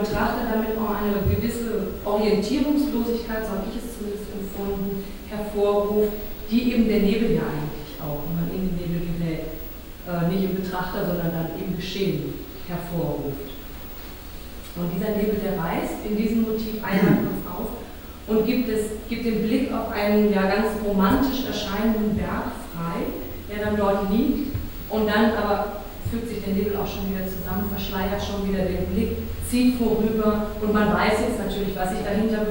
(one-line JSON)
{"title": "cologne, goltsteinstrasse, vernissage", "date": "2008-09-16 10:00:00", "description": "rede dr. ann kathrin günzel - kuratorin zur ausstellungseröffnung der medienkünstlerin tessa knapp im temporären kunstraum im renovierten dachstuhl des hauses\nsoundmap nrw: social ambiences/ listen to the people - in & outdoor nearfield recordings", "latitude": "50.91", "longitude": "6.97", "altitude": "53", "timezone": "Europe/Berlin"}